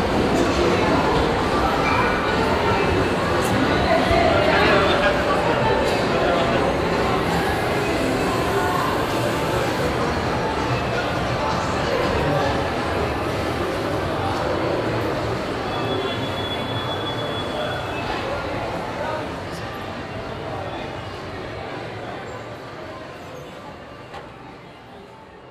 Le Passage, Tunis, Tunesien - tunis, central parc, shopping mall
Inside a newly build shopping mall, western style. A walk thru the ground and first floor with taking the moving staircases. The sound of Shopping Muzak, upstairs people eating fast food, and the steps and conversation of several people inside the building.
international city scapes - social ambiences and topographic field recordings